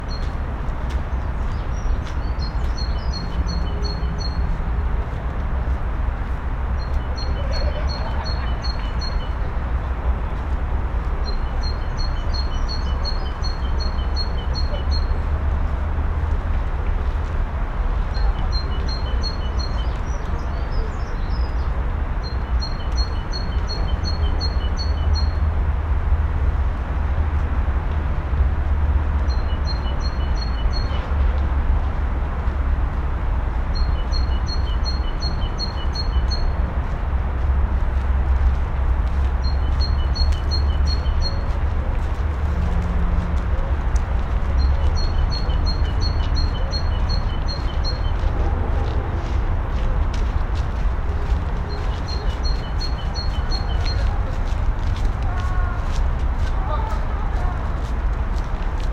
We hid ourselves to listen to the birds.